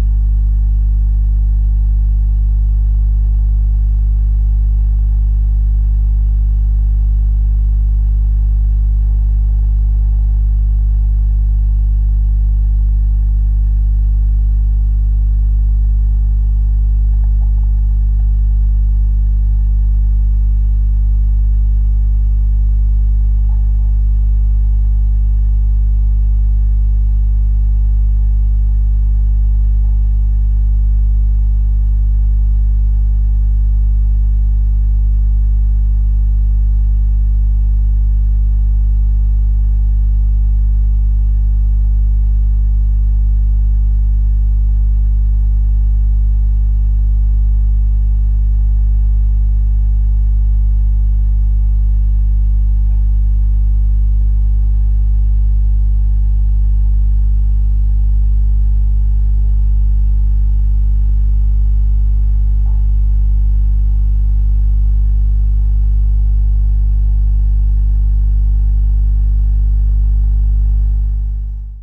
Poznan, living room - subwoofer
sounds of circuits of my 150W subwoofer. no audio source is connected to it. this is its heartbeat. recorder gain cranked up to pick up the vibrations.